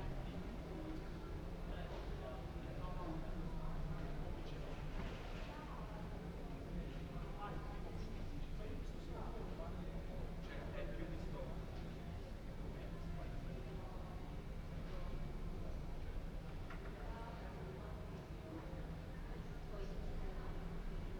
Ascolto il tuo cuore, città, I listen to your heart, city. Several chapters **SCROLL DOWN FOR ALL RECORDINGS** - Friday afternoon May 1st with laughing students in the time of COVID19 Soundscape
"Friday afternoon May 1st with laughing students in the time of COVID19" Soundscape
Chapter LXIII of Ascolto il tuo cuore, città. I listen to your heart, city
Friday May 1stth 2020. Fixed position on an internal terrace at San Salvario district Turin, fifty two days after emergency disposition due to the epidemic of COVID19.
Start at 3:43 p.m. end at 4:09 p.m. duration of recording 25’46”
Piemonte, Italia, 1 May 2020